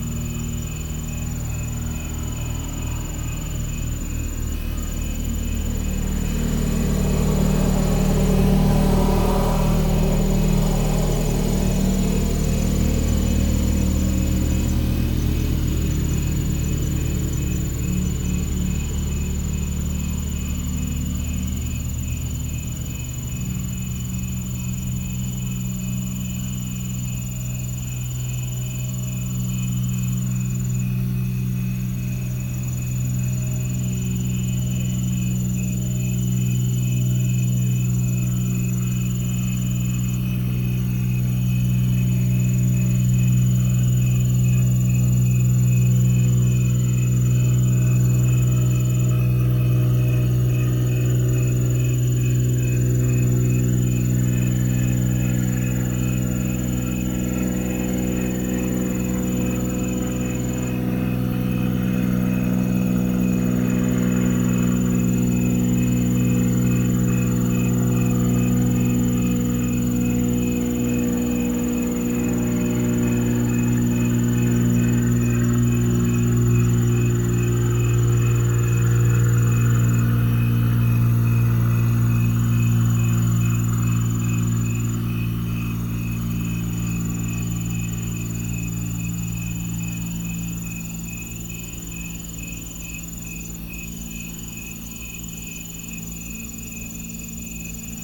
Main St, Geneseo, KS, USA - Geneseo Manhunt
A fixed wing Cessna circles above the fields on the south side of Geneseo. Radio chatter from a state highway patrol car in the background, barely audible over the crickets and cicadas. Stereo mics (Audiotalaia-Primo ECM 172), recorded via Olympus LS-10.